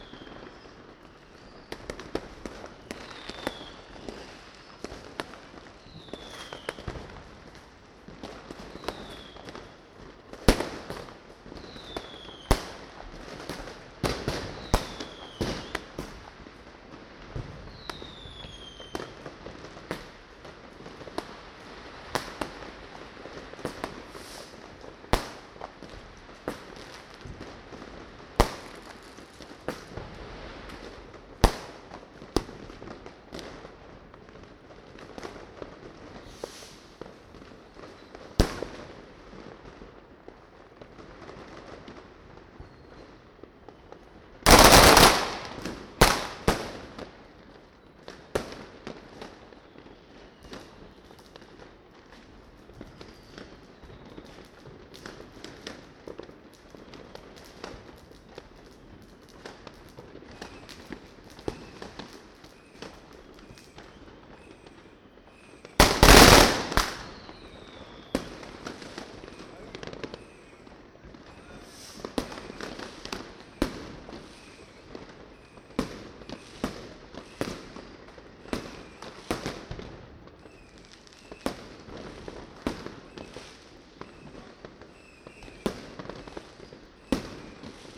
Ellekomstraat, The Hague, Fireworks.
New Year celebration with fireworks.
Zoom H2 recorder with SP-TFB-2 binaural microphones.